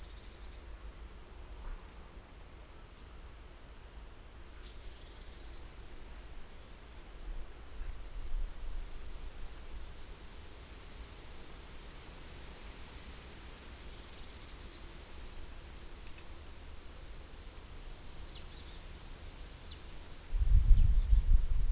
Am Adelsberg, Bad Berka, Germany - Quiet spaces beneath Paulinenturm Bad Berka with soft breezes.
Best listening with headphones on low volume.
A relaxed atmosphere with soft breezes, rustling leaves, prominent sound of birds, and distant vehicle drones.
This location is beneath a tourist attraction "Paulinenturm".The Paulinenturm is an observation tower of the city of Bad Berka. It is located on the 416 metre high Adelsberg on the eastern edge of the city, about 150 metres above the valley bottom of the Ilm.
Recording and monitoring gear: Zoom F4 Field Recorder, LOM MikroUsi Pro, Beyerdynamic DT 770 PRO/ DT 1990 PRO.
Landkreis Weimarer Land, Thüringen, Deutschland